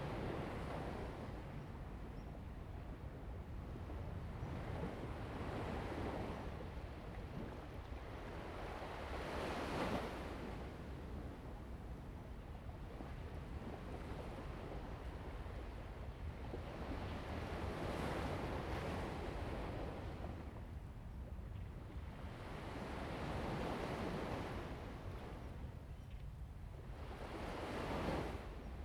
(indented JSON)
{
  "title": "雙口, Lieyu Township - At the beach",
  "date": "2014-11-04 10:21:00",
  "description": "Sound of the waves, At the beach\nZoom H2n MS+XY",
  "latitude": "24.44",
  "longitude": "118.23",
  "altitude": "4",
  "timezone": "Asia/Shanghai"
}